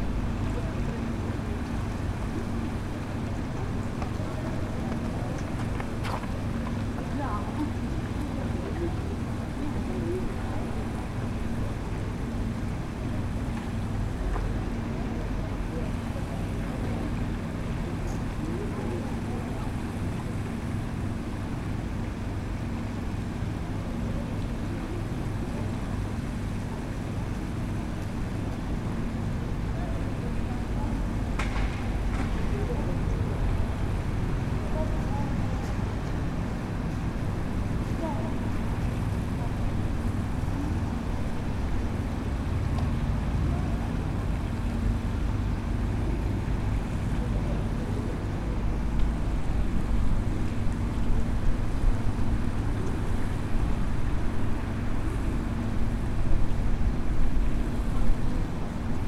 ULICA VITA KRAIGHERJA, Maribor, Slovenia - corners for one minute
one minute for this corner: ULICA VITA KRAIGHERJA 3